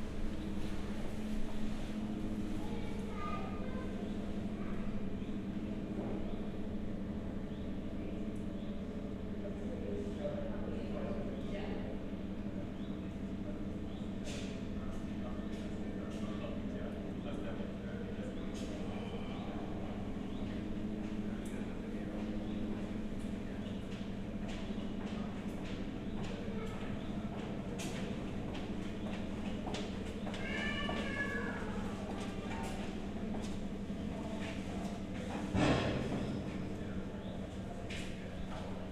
Funkhau Nalepastr., sound of a fridge, room ambience.
(SD702, Audio Technica BP4025)